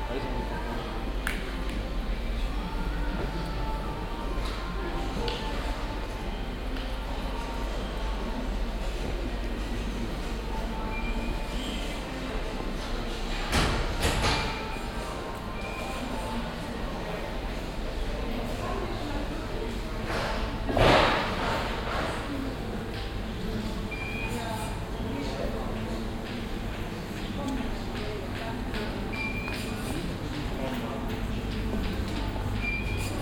cologne, poll, rolshover str., baumarkt
mittags im baumarkt, das kassenpiepsen, werbebotschaften mittels kleinstmonitoren, hintergrundsmusiken, fachtalk und farbanrührung mit spezial maschine
soundmap nrw - social ambiences - sound in public spaces - in & outdoor nearfield recordings